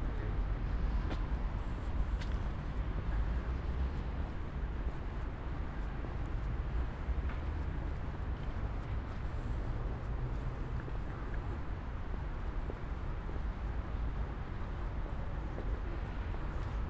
Ascolto il tuo cuore, città. I listen to your heart, city, Chapter CLXXXIV - Valentino Park winter soundwalk in the time of COVID19": soundwalk, first recording of 2022.
"Valentino Park winter soundwalk in the time of COVID19": soundwalk, first recording of 2022.
Chapter CLXXXIV of Ascolto il tuo cuore, città. I listen to your heart, city
Sunday, January 9th, 2022. San Salvario district Turin, from Valentino park to home
Start at 5:26 p.m. end at 5:49 p.m. duration of recording 23’09”
The entire path is associated with a synchronized GPS track recorded in the (kmz, kml, gpx) files downloadable here: